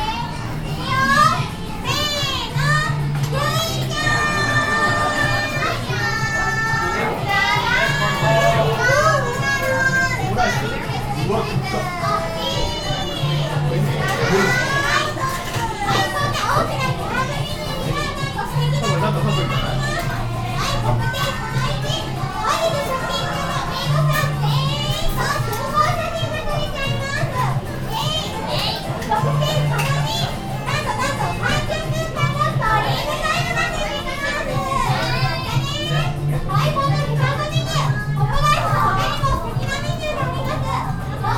tokyo, akihabara, maid cafe
another recording in the same place - kindergarten brthday continuing - attention to the girls high pitched voices
international city scapes - social ambiences
27 July 2010, 15:06